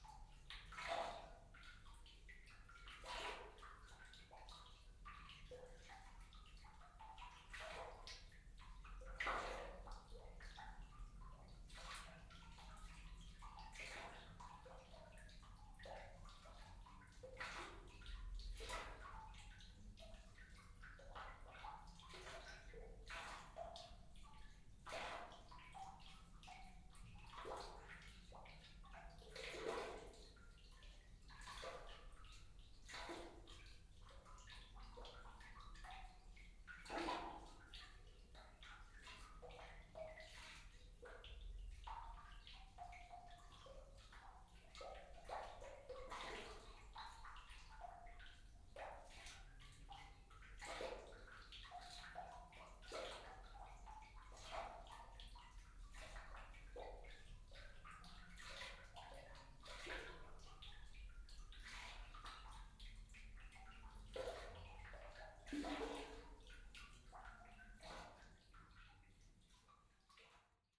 Meyersche Stollen, Aarau, Schweiz - Water in Meyersche Stollen
First recording of the water in the Meyersche Stollen, binaural.
Aarau, Switzerland, 14 March 2016, 14:00